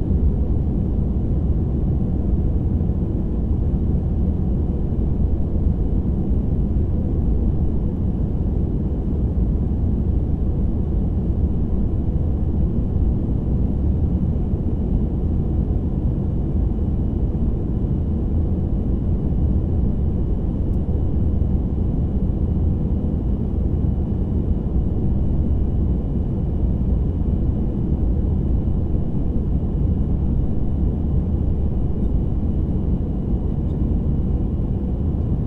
Take off of the Aeroflot plane from Yerevan Armenia, Zvartnots airport, to Moscow Russia, Sheremetyevo airport.